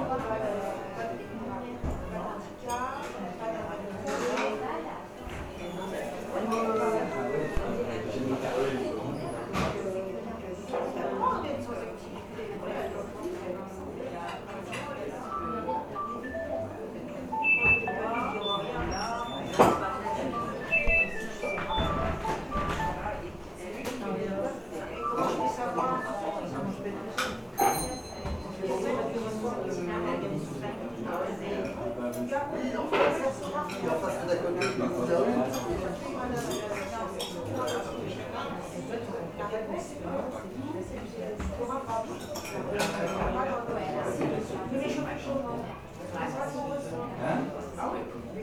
Pl. aux Roues, Auray, França - L'épicerie
FR: Ambiance dans un petit restaurant.
EN: Restaurant ambience.
Recorder : Tascam DR07 internal mics
France métropolitaine, France, February 2022